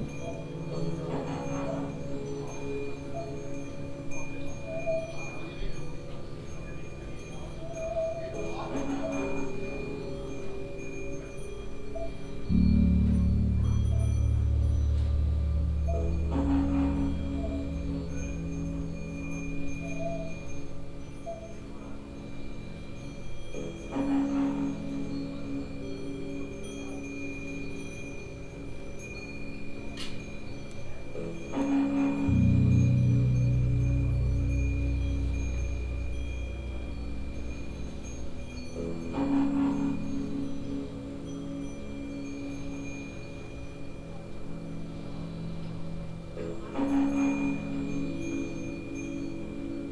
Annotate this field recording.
soundmap d - social ambiences and topographic field recordings